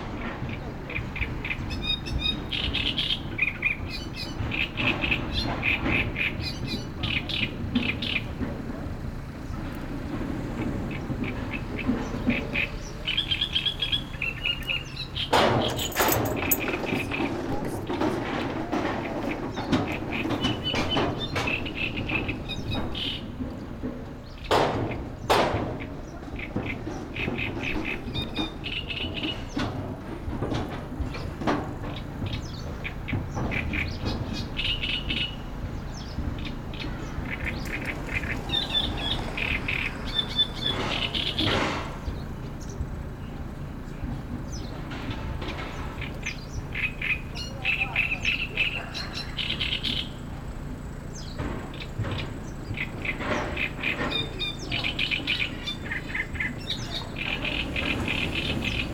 Wyspa Sobieszewska, Gdańsk, Poland - Most i peak 2
Dźwięki mostu i ptaków. rec Rafał Kołacki